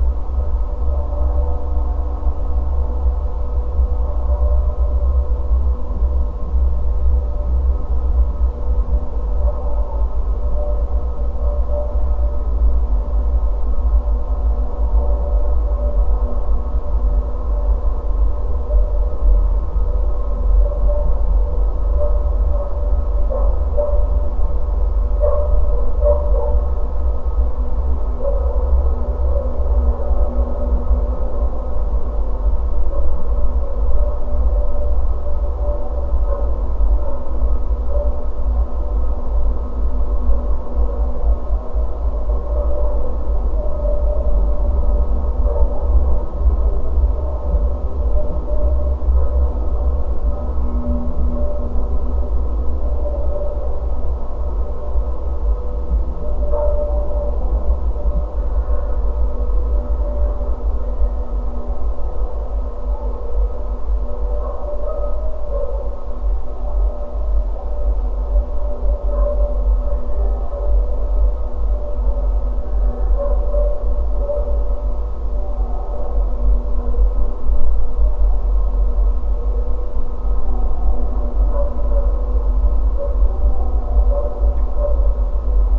Vilnius, Lithuania, lifts tower
winter skiing tracks. geophone on lift's tower
Vilniaus apskritis, Lietuva, 17 October 2020